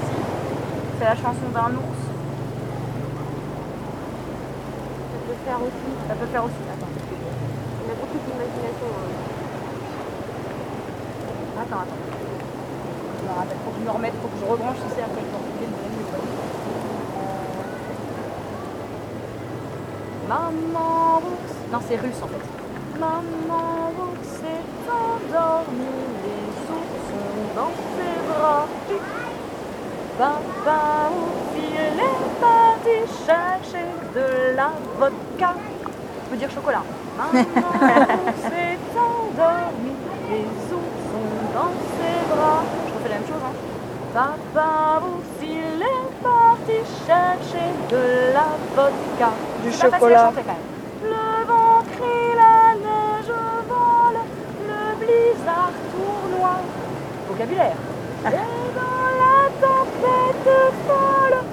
Mercredi (Quarta-feira de cinzas), après-midi, bikini babes chant sur la plage.
5 March 2014, 16:03